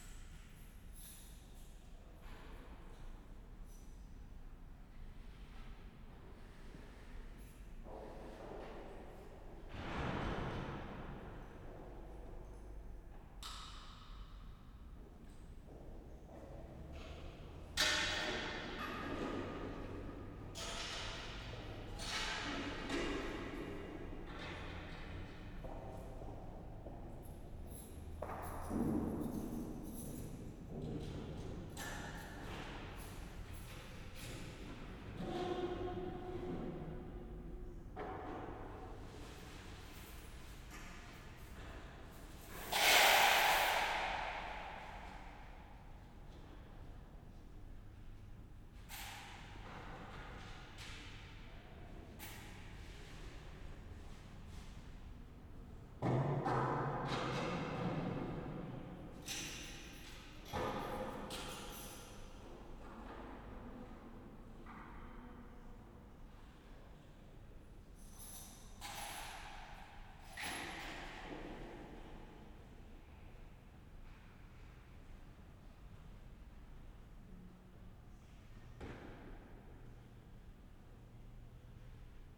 Maribor, Sv. Marija church - St.Mary parish, church ambience
inside Sv.Marija church
(SD702 Audio Technica BP4025)
31 July 2012, 12:20